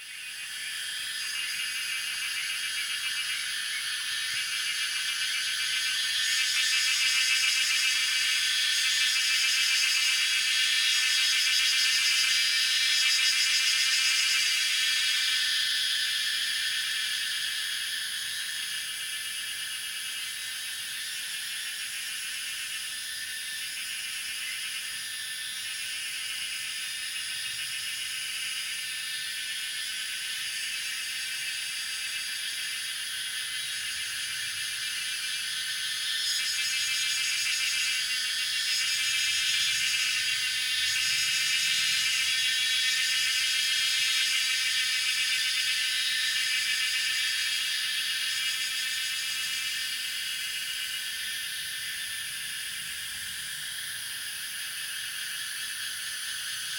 Birds singing and Cicada sounds, Faced woods
Zoom H2n MS+XY